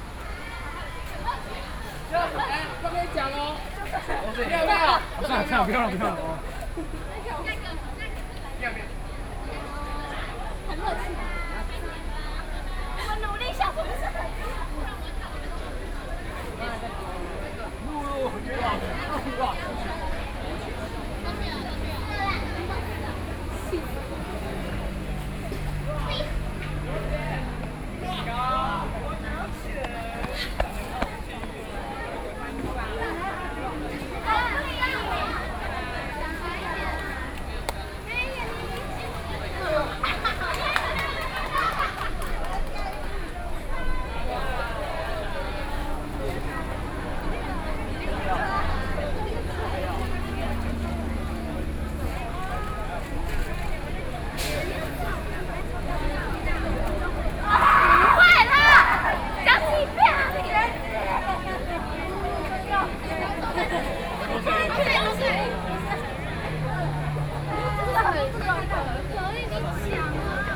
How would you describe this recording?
The end of high school students performing, Sony PCM D50 + Soundman OKM II